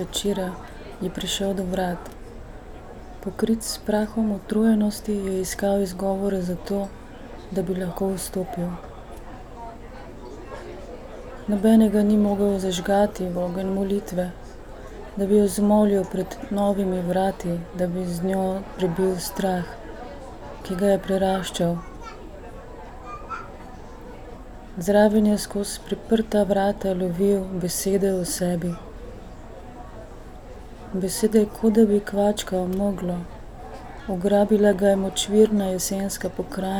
reading fragment of poem Lupine, Dane Zajc
Novigrad, Croatia, 12 July 2014, 09:51